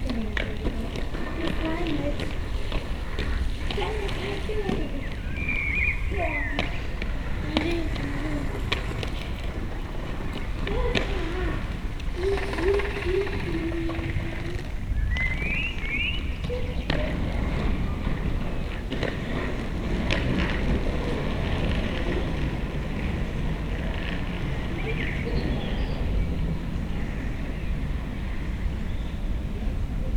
Beselich Niedertiefenbach, Grabenstr. - quiet summer evening
quet summer evening in small viallge. two kids are still on the street, nothing special happens.